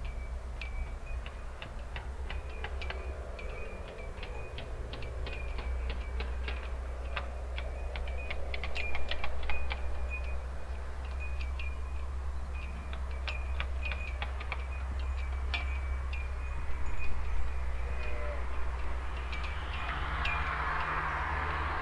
marnach, memorial bell and flags
A small memorial square with an old bell and a group of flags that flatter in the wind while their ropes play the metal pole. Nearby the main road with dense morning traffic and more distant a group of sheeps at a farm yard.
Marnach, Denkmalglocke und Flaggen
Ein kleines Denkmal mit einer alten Glocke und einigen Flaggen, die im Wind flattern, während ihre Seile an ddie Metallpfähle schlagen. Nebenan die Hauptstraße mit dichtem Morgenverkehr und weiter weg eine Gruppe von Schafen auf einem Bauernhof.
Marnach, cloches et drapeau du mémorial
Un petit square commémoratif avec une vielle cloche et un groupe de drapeaux qui flottent au vent tandis que leurs câbles cognent contre le mât en métal. A proximité, la route principale avec un trafic matinal intense et plus loin encore, un groupe de moutons dans la cour d’une ferme.
13 September 2011, 17:56